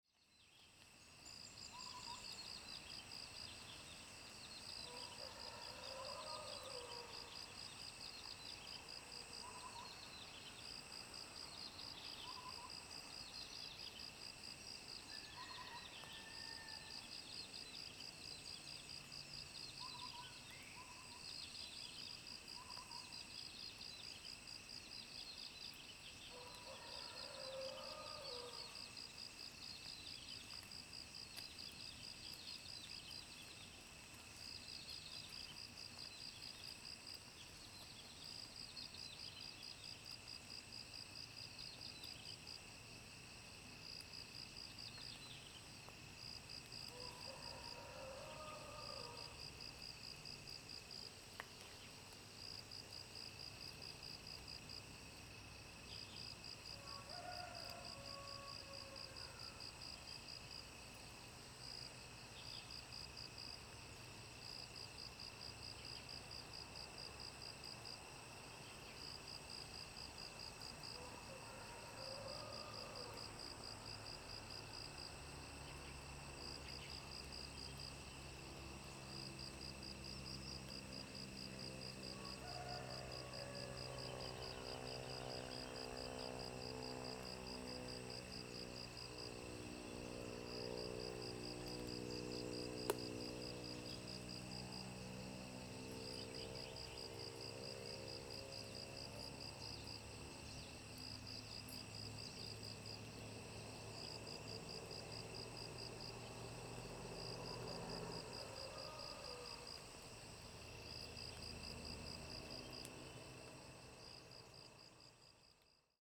TaoMi Lane, Puli Township - Morning, mountain road
Early morning, Bird calls, Frogs sound, Sound of insects, Chicken sounds
Zoom H2n MS+XY
Nantou County, Puli Township, 桃米巷11號, 30 April 2015